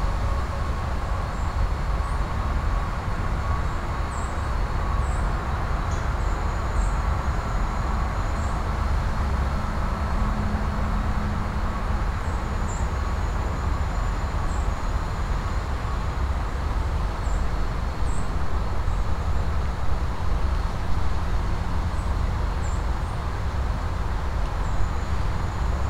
North Mississippi Regional Park - Mississippi RIver Water Treatment Plant North Minneapolis